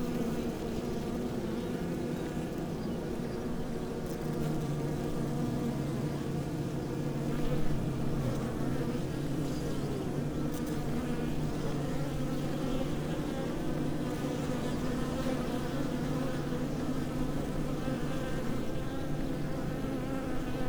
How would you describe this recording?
퇴골계곡 꿀벌집들_Taegol valley apiary_undisturbed bees_